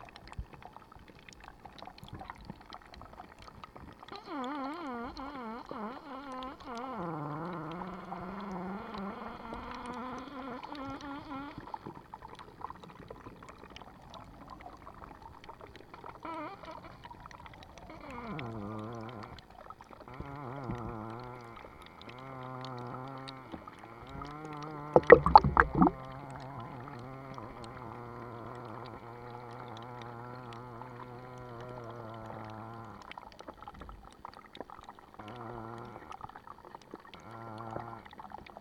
Povojné, Horný Tisovník, Slovensko - Minerálny prameň
Underwater recording of "Horny Tisovnik" mineral spring.